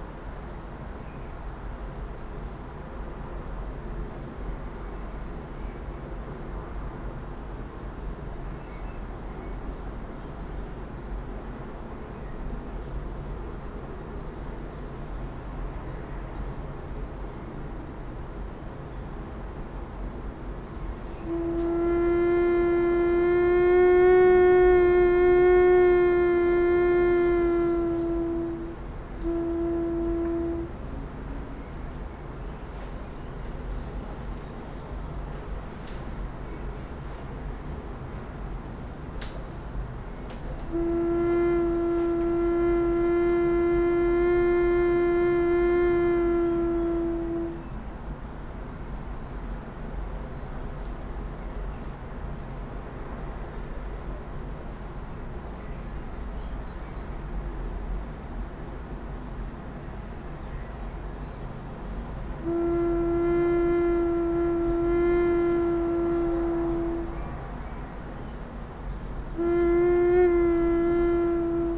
Poznan, Mateckiego street - window slit flute
recorder placed on a window sill. windows are closed but there is a small slit that lets in the outside sounds. Heavy traffic is already daunting at this time of a day. As well as unceasing landscape and gardening works in the neighborhood nearby. But the highlight of the recording is a sound that is similar to a wind instrument. It occurs only when the wind is strong and blows into western direction . You can also hear the cracks of my busted ankle. (sony d50)
Poznań, Poland, 13 April 2018, 07:06